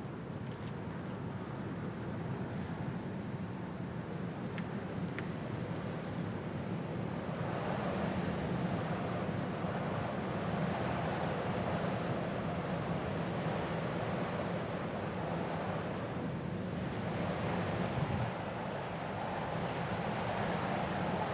Recorded around 2am on a bridge over railway tracks. Stereo recording, best listened with headphones.